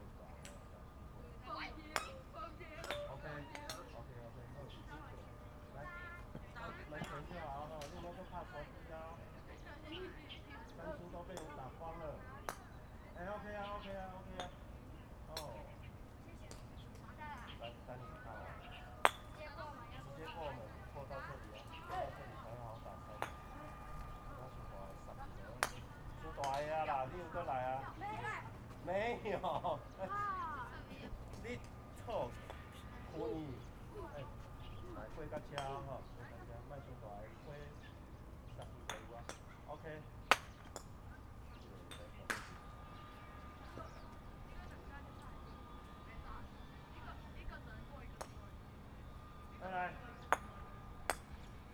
Houliao Elementary School, Fangyuan Township - Environmental sounds
Class voice, Aircraft flying through, Practice playing croquet, Birdsong, Distant machine noise, Zoom H6